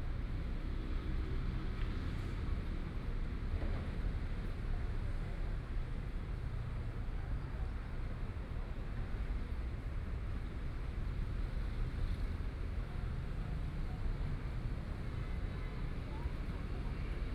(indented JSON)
{
  "title": "MingShui Park, Taipei City - in the Park",
  "date": "2014-04-12 18:52:00",
  "description": "Night park, Traffic Sound\nPlease turn up the volume a little. Binaural recordings, Sony PCM D100+ Soundman OKM II",
  "latitude": "25.08",
  "longitude": "121.55",
  "altitude": "8",
  "timezone": "Asia/Taipei"
}